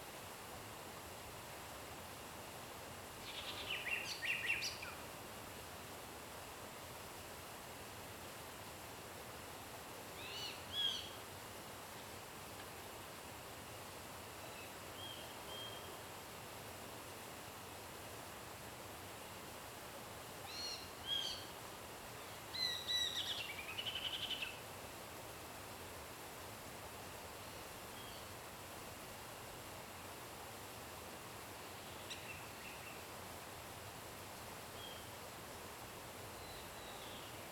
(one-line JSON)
{"title": "Zhonggua Rd., Puli Township, 成功里 - Birds singing", "date": "2016-04-20 16:06:00", "description": "Birds, Sound of water\nZoom H2n MS+XY", "latitude": "23.95", "longitude": "120.90", "altitude": "476", "timezone": "Asia/Taipei"}